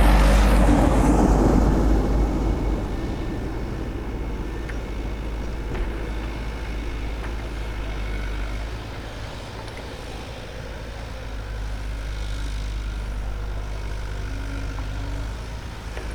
Lithuania, Utena, excavator in the distance
working excavator in the distance as cars pass by